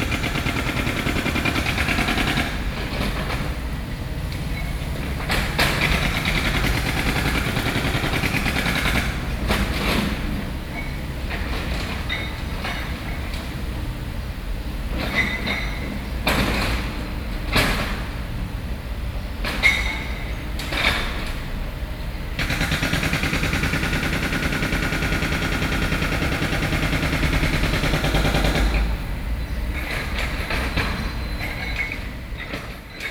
安康公園, Neihu District, Taipei City - Site construction noise